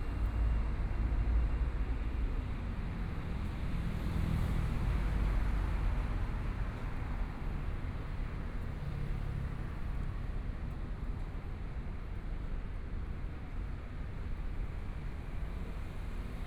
Zhongshan Rd., Hualien City - on the road
walking on the Road, Sound various shops and restaurants, Traffic Sound
Please turn up the volume
Binaural recordings, Zoom H4n+ Soundman OKM II